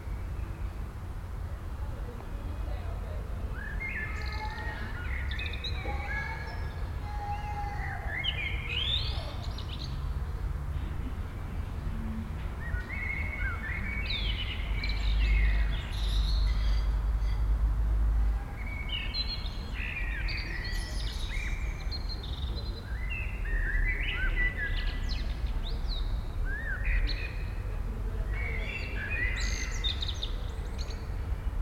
Wik, Kiel, Deutschland - Evening in the courtyard
Evening atmosphere in a courtyard of an urban residential district. Many blackbirds, some people talking and having their evening meal on their balcony. In a distance some children at play. Omnipresent traffic hum.
Binaural recording, Soundman OKM II Klassik microphone with A3-XLR adapter and windshield, Zoom F4 recorder.